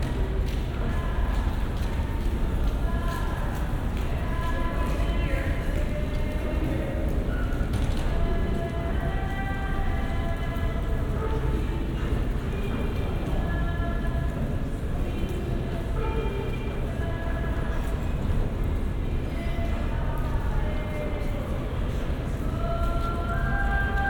equipment used: Olympus LS-10 & OKM Binaurals
Sitting in the middle of the rotunda at the foot of the escalator inside the St-Jacques entrance to the Square-Victoria Metro Station. Due to its round shape, the space has a distinct echo that colors the sounds taking place inside and traps the sounds taking place outside. The woman singing is in a long hall about 40 meters away from the rotunda.